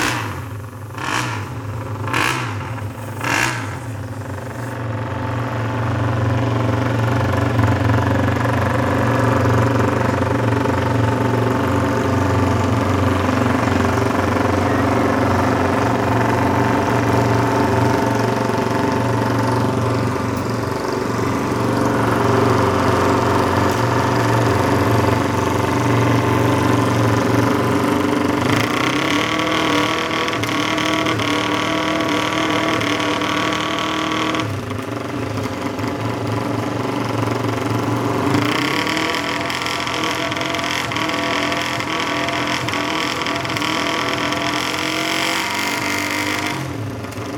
Silverstone Circuit, Towcester, UK - day of champions 2013 ... pit lane walkabout ...
day of champions ... silverstone ... pit lane walkabout ... rode lavaliers clipped to hat to ls 11 ...
England, United Kingdom, 29 August 2013, 14:08